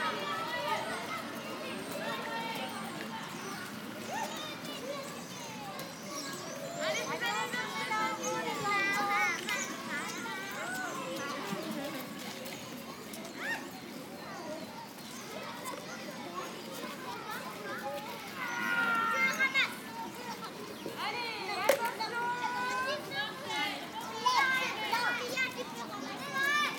Avenue Michal, Paris, France - Walk in Buttes-Chaumont from the main gate to the lake
Walk-in, by winter day, Buttes-Chaumont parc from the main gate to the lake, I took several ambiances in front of the main gate and into the parc: Traffic outside of the parc, the chirp of the bird in the woods, and the screech of the children, snatches of jogger's conversations and stroller's
France métropolitaine, France, 22 January 2021, 11:34